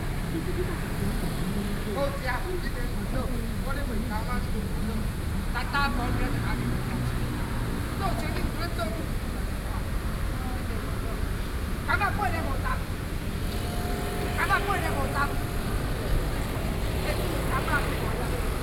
Guangzhou St., Wanhua Dist., Taipei City - Hawking fruit sound
Taipei City, Taiwan, 3 November 2012